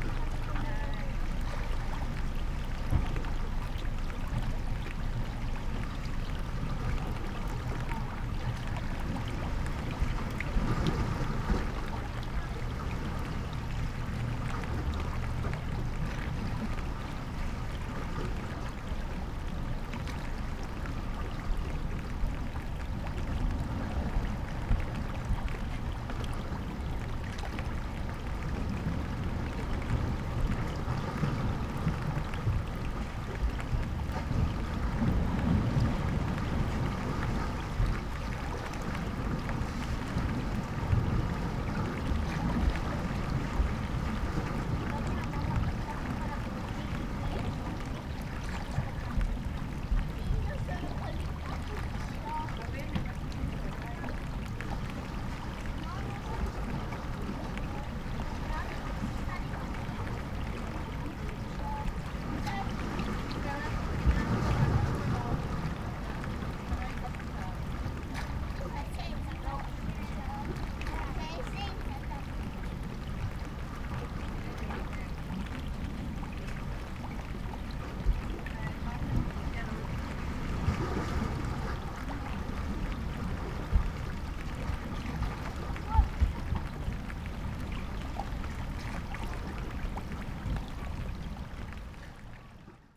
below the frame of lighthouse iron doors